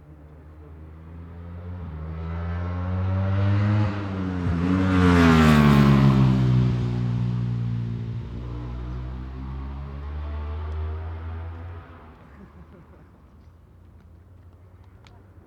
Brands Hatch GP Circuit, West Kingsdown, Longfield, UK - wsb 2004 ... superbike practice ...
wsb 2004 ... superbike practice ... one point stereo mic to minidisk ... time approx ...
July 2004